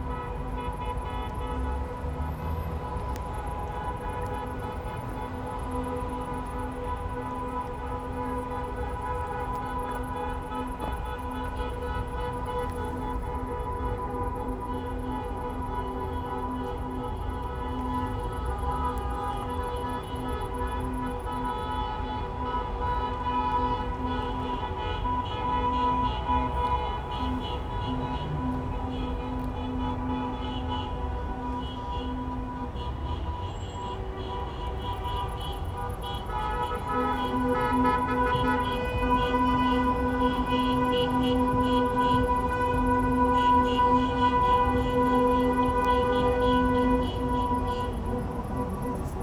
Anderlecht, Belgium - Turkish wedding motorcade
A larger sonic place - a patch of overgrown disused land. The variety of plants here is impressive. There a grasshoppers and birds. It is an open site and the sounds from around are very obvious, traffic, music. Today a wedding clebrtion motorcade passes with horns blaring.
15 October, 16:39